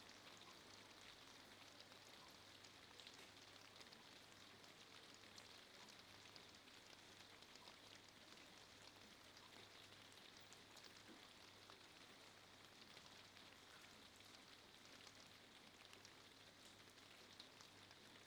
Pl. de la Gare, Houdain, France - Houdain - Pluie
Houdain (Pas-de-Calais)
Premières pluies d'automne.
sur le toit de la terrasse (surface plastique/plexiglass)
ZOOM F3 + Neumann KM 184